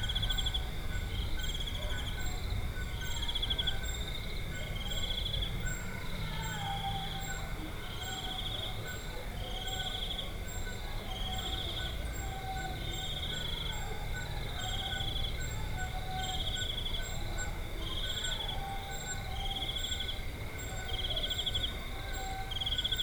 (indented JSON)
{
  "title": "Mission school guest house, Chikankata, Zambia - Chikankata school grounds at night",
  "date": "2018-09-04 21:40:00",
  "description": "listening out in to the night from the garden of the guest house; some festivities going on in the school grounds... we are spending just one night here as guests of Chiefteness Mwenda; it's a long journey out here; you can hardly make it back and forth in a day to Mazabuka...",
  "latitude": "-16.23",
  "longitude": "28.15",
  "altitude": "1253",
  "timezone": "Africa/Lusaka"
}